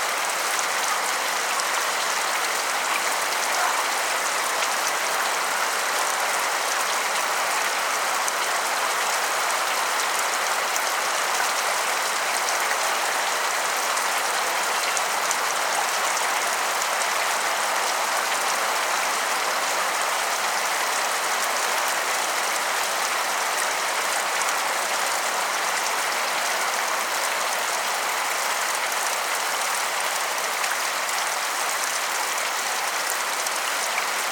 tondaei.de: escalles am meer
Escalles, France, 30 December 2010